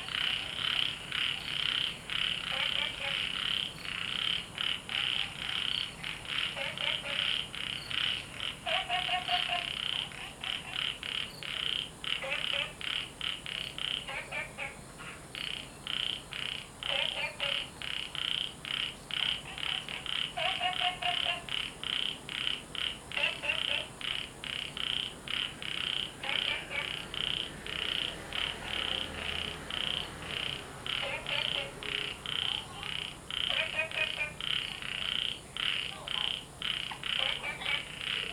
樹蛙亭, Puli Township - Frogs chirping
Sound of insects, Frogs chirping
Zoom H2n MS+XY
Nantou County, Puli Township, 桃米巷29-6號, 11 August 2015